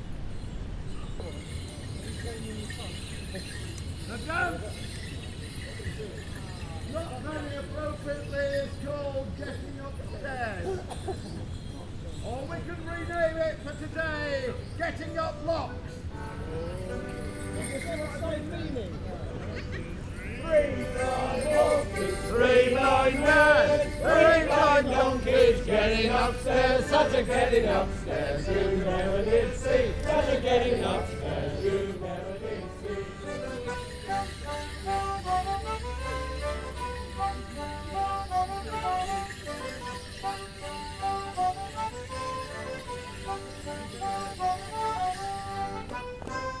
Goring Lock, Goring, Reading, UK - The Kennet Morris Men dancing at the lock
The Kennet Morris Men performing at Goring Lock.
1 May 2017, ~11:00